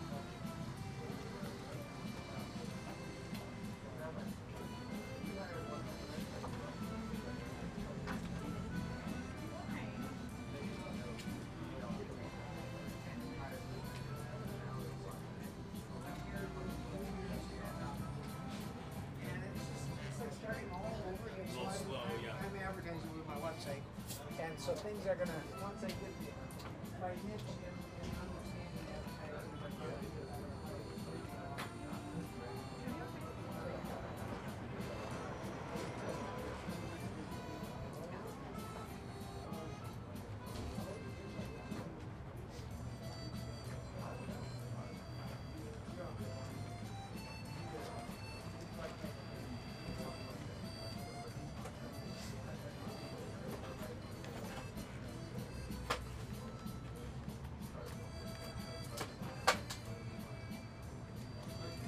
Genova delicatessen - Italian deli, Oakland Rockridge district
Genova delicatessen - Italian deli, Oakland, Rockridge district
Oakland, CA, USA, 16 November 2010